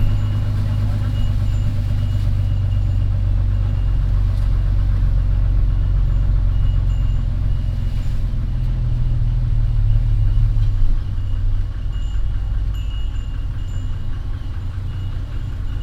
Amphoe Phunphin, Chang Wat Surat Thani, Thailand - Bus in Surathani - dick und rot und uralt
A bus from the train station to the center of Surathani. The vehicle is amazing, around 50 years old, very slow, a beautiful sound, picking up people wherever someone shows up.